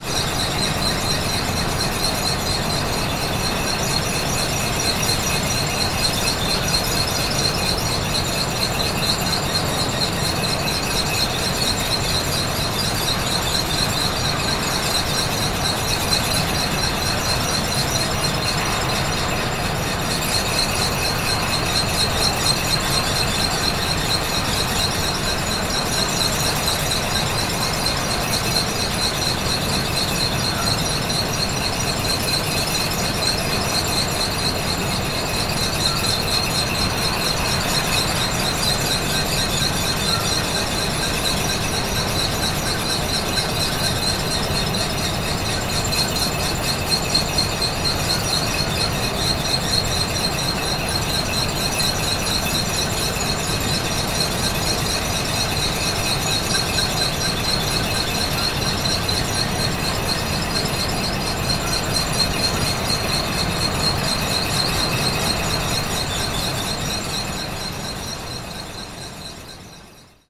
Squeaking rollers of a belt conveyor, coking plant, Seraing, Belgium - Zoom H4n